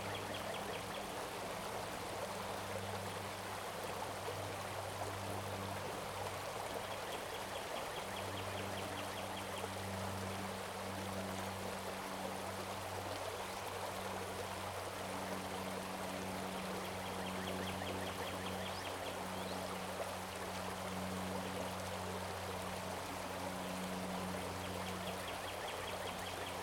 {"title": "Castlewood State Park, Ballwin, Missouri, USA - Grotpeter Kiefer Creek Crossing", "date": "2021-04-13 19:24:00", "description": "Recording near trail crossing Kiefer Creek in Castlewood State Park.", "latitude": "38.55", "longitude": "-90.55", "altitude": "133", "timezone": "America/Chicago"}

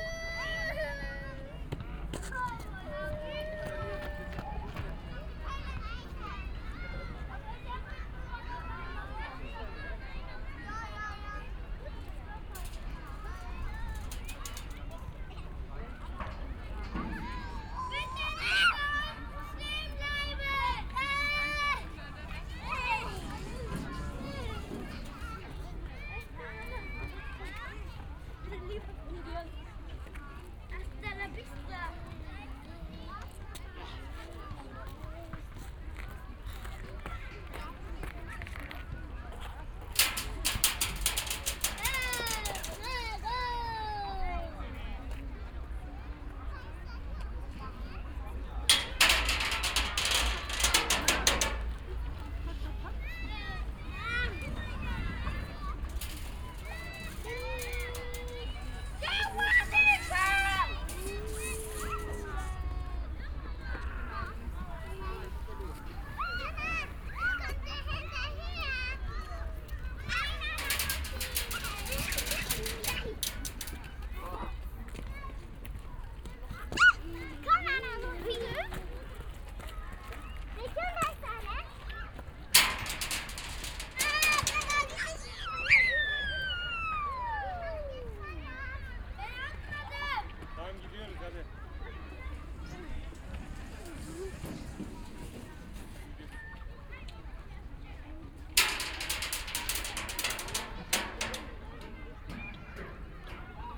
{"title": "koeln, mediapark, playground - children on slide", "date": "2010-10-10 16:45:00", "description": "playground in Mediapark, children having fun on the big slide (binaural, use headphones!)", "latitude": "50.95", "longitude": "6.94", "timezone": "Europe/Berlin"}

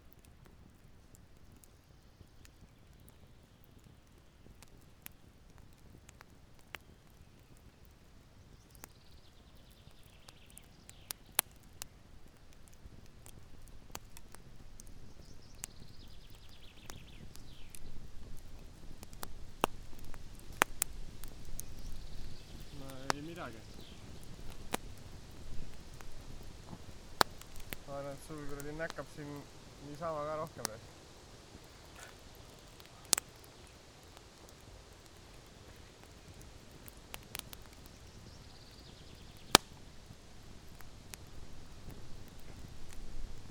{"title": "Campfire on a cold summerday", "latitude": "59.18", "longitude": "27.84", "altitude": "40", "timezone": "GMT+1"}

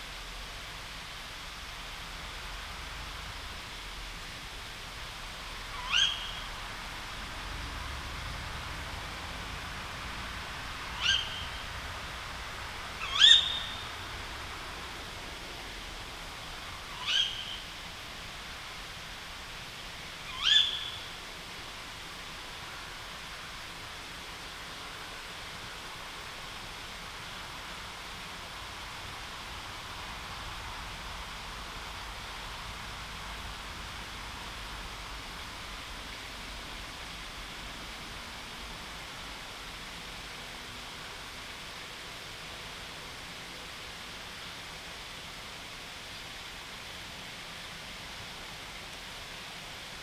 {"title": "Pilis, Lithuania, tawny owl", "date": "2021-09-07 21:20:00", "description": "Tawny owl at Panemunes Castle", "latitude": "55.10", "longitude": "22.99", "altitude": "35", "timezone": "Europe/Vilnius"}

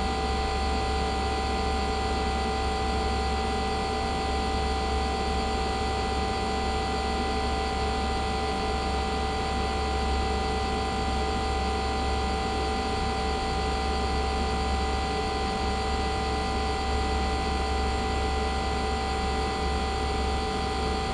{"title": "Staverton Park, Woodbridge, Suffolk UK - water pump", "date": "2022-05-02 16:33:00", "description": "water pump house in Staverton Park\nMarantz PMD620", "latitude": "52.11", "longitude": "1.44", "altitude": "4", "timezone": "Europe/London"}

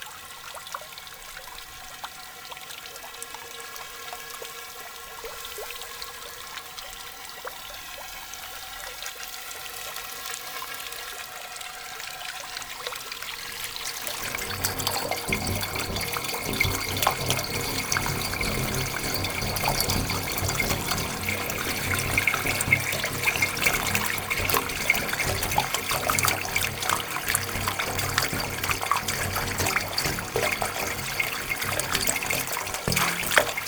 {"title": "arêches, France - Strange fountain", "date": "2017-06-08 13:30:00", "description": "The strange fountain placed in the center of the ski village called Arêches-Beaufort. It's a quite weird fountain, with an uncommon sounding effect. After one minut hearing the sound, you plunge into the heart of the fountain.", "latitude": "45.69", "longitude": "6.57", "altitude": "1033", "timezone": "Europe/Paris"}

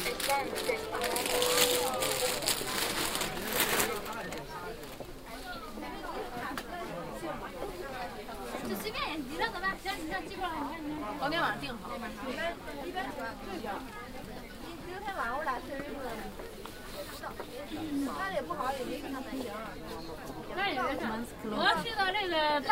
{"title": "beijing, kleidermarkt, indoor", "description": "beijing cityscape - one of several indoor clothing market - place maybe not located correctly -please inform me if so\nproject: social ambiences/ listen to the people - in & outdoor nearfield recordings", "latitude": "39.89", "longitude": "116.47", "altitude": "42", "timezone": "GMT+1"}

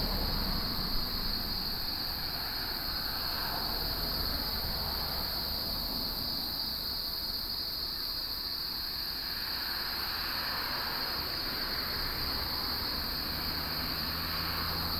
{"title": "內山公路, Longtan Dist., Taoyuan City - Next to the road", "date": "2017-07-25 06:34:00", "description": "Next to the road, Traffic sound, Cicadas", "latitude": "24.83", "longitude": "121.20", "altitude": "277", "timezone": "Asia/Taipei"}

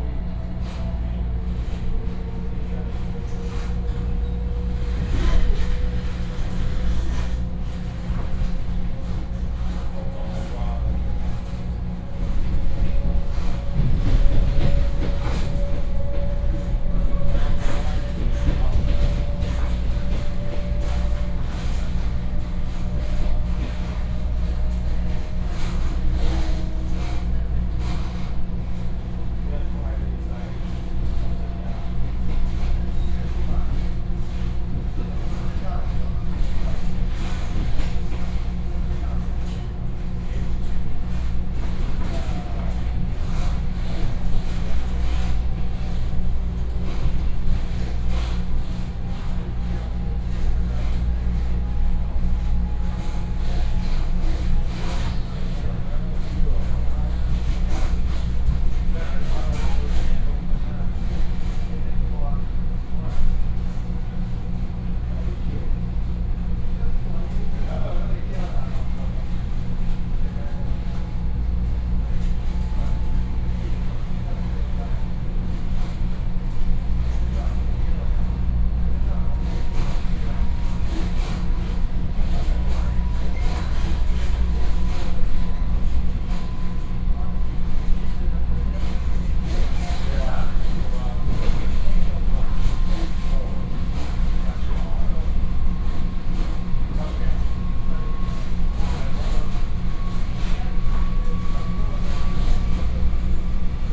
{"title": "沙鹿區鹿峰里, Shalu Dist., Taichung City - In the train compartment", "date": "2017-01-19 10:33:00", "description": "In the train compartment, From Shalu Station to Qingshui Station", "latitude": "24.25", "longitude": "120.56", "altitude": "4", "timezone": "Asia/Taipei"}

